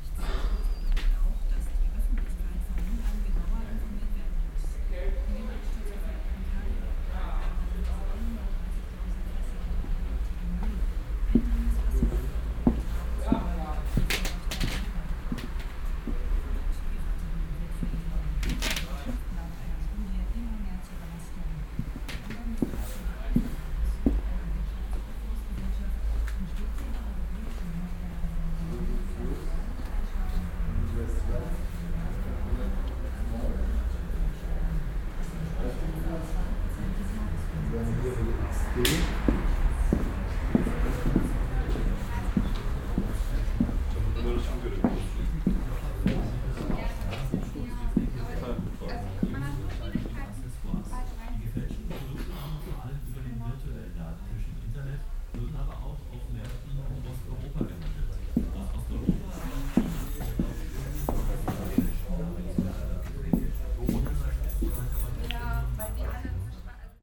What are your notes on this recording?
soundmap nrw - social ambiences and topographic field recordings